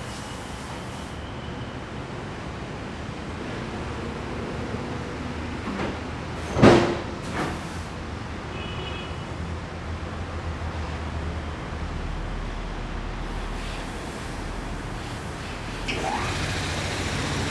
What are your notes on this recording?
Outside the factory, Zoom H4n +Rode NT4